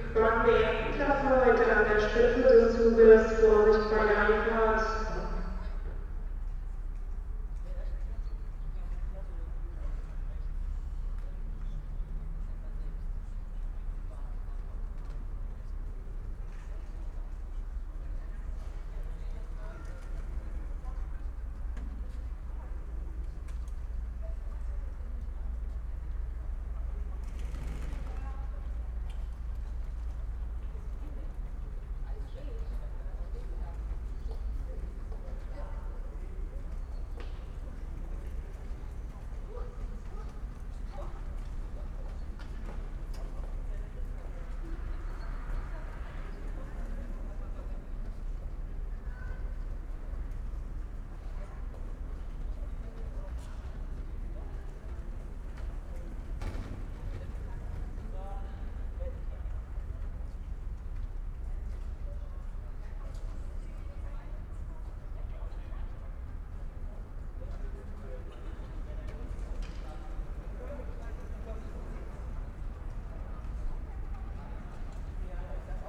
ICE station, Limburg an der Lahn, Deutschland - train arrives
station ambience, ICE high speed train arrives and stops with heavily squeaking brakes
(Sony PCM D50, DPA4060)
Limburg, Germany, 29 October, 12:15